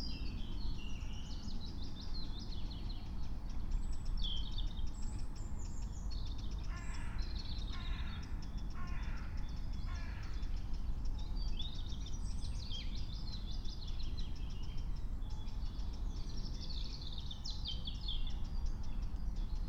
08:59 Berlin, Königsheide, Teich - pond ambience
Deutschland, May 2022